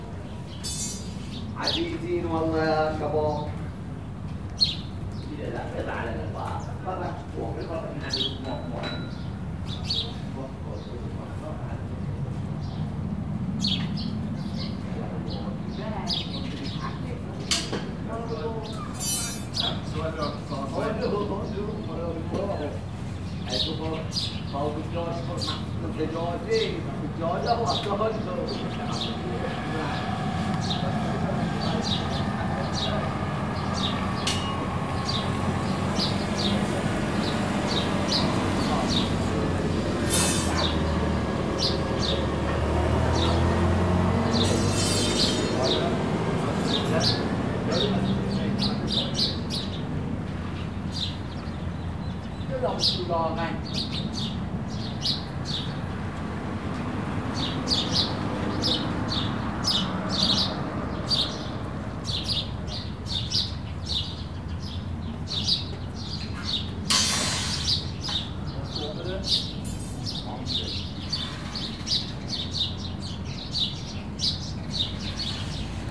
Sitra, Bahrain - Fishermen making metal cages
Fishermen constructing large metal "cages" for the fish to swim into.